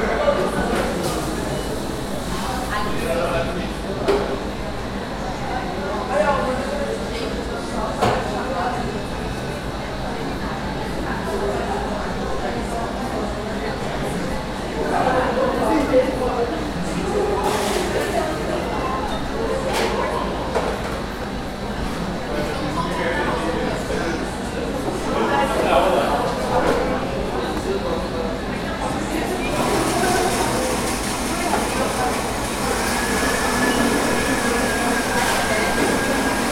R. Dr. Almeida Lima - Mooca, São Paulo - SP, 03164-000, Brasil - REAL Starbucks audio
cafeteria starbucks anhembi morumbi mooca